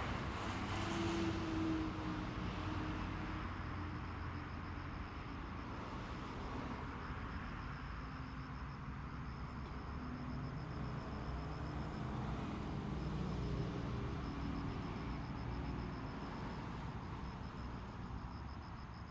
on the rail side, Opposite the dog, The train passes by, Zoom H2n MS+XY